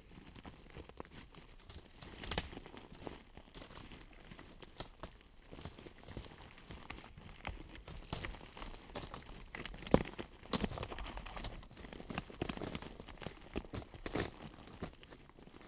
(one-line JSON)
{"title": "dried pine cones at trémoulet - KODAMA session", "date": "2009-09-05 12:18:00", "description": "dried pine cones played by KODAMA located on the hill of trémoulet across from Chasseline, France", "latitude": "45.67", "longitude": "2.16", "altitude": "759", "timezone": "Europe/Berlin"}